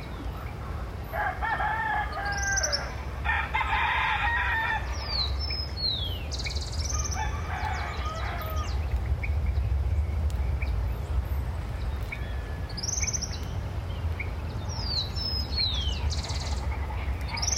{"title": "Puente Nacional, Santander, Colombia - Noise environment", "date": "2021-05-10 05:20:00", "description": "Suburban farm with a warm climate of around 20 degrees Celsius, Peñitas village in the municipality of Puente Nacional, Santander, Colombia. With abundant flora and fauna, national road Bogota- Bucaramanga, with transit to the Atlantic coast being a life of heavy traffic and airway. There are domestic animals because it is a populated environment.", "latitude": "5.86", "longitude": "-73.68", "altitude": "1717", "timezone": "America/Bogota"}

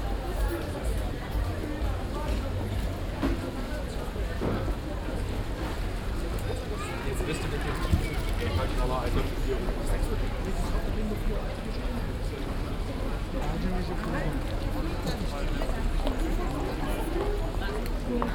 {"title": "cologne, hohe strasse, mittags", "date": "2008-07-08 16:21:00", "description": "shopping atmosphäre mittags auf der einkaufsmeile hohe strasse, schritte, stimmen, boutiquenmusiken\nsoundmap nrw: social ambiences/ listen to the people - in & outdoor nearfield recordings, listen to the people", "latitude": "50.94", "longitude": "6.96", "altitude": "67", "timezone": "Europe/Berlin"}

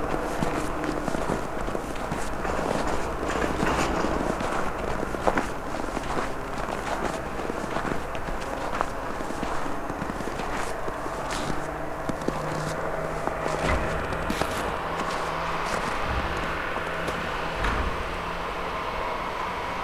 {"title": "Lithuania, Utena, excavator at the pile ov snowin the distance", "date": "2010-12-15 15:30:00", "description": "excavator cleaning snow", "latitude": "55.52", "longitude": "25.62", "altitude": "125", "timezone": "Europe/Vilnius"}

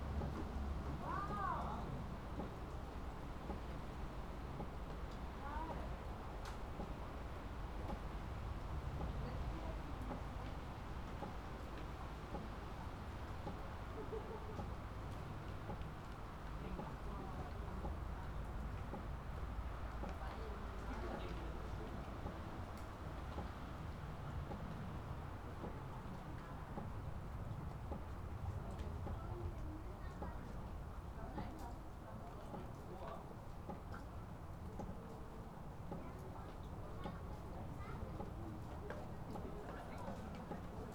{"title": "berlin, schwarzer kanal, inside the tent - berlin, schwarzer kanal, inside the tent", "date": "2011-08-04 16:15:00", "description": "ambient people, tent, rain, construction", "latitude": "52.48", "longitude": "13.46", "altitude": "38", "timezone": "Europe/Berlin"}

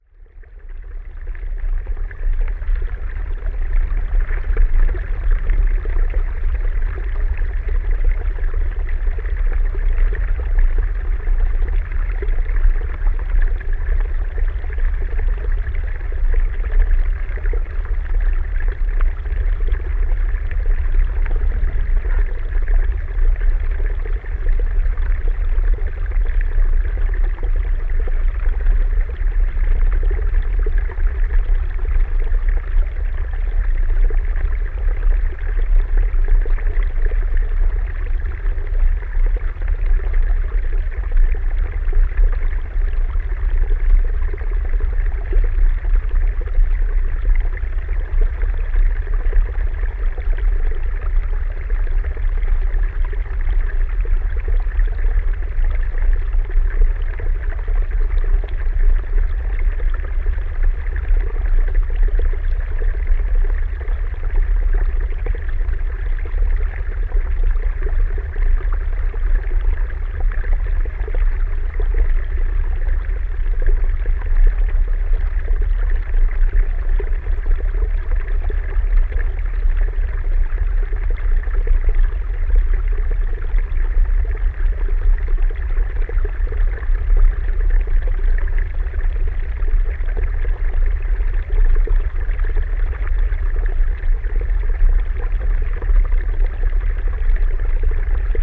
{"title": "Vyzuonos, Lithuania, hydrophone in the mud", "date": "2021-04-16 17:40:00", "description": "hydrophone in the mood, near water spring.", "latitude": "55.57", "longitude": "25.51", "altitude": "96", "timezone": "Europe/Vilnius"}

